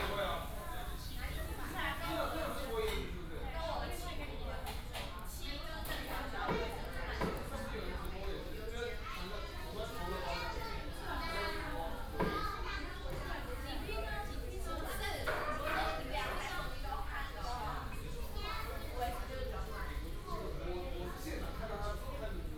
瑞芳鴨肉麵, Xinfeng Township - The duck noodle shop
The duck noodle shop, The waiters chatted with each other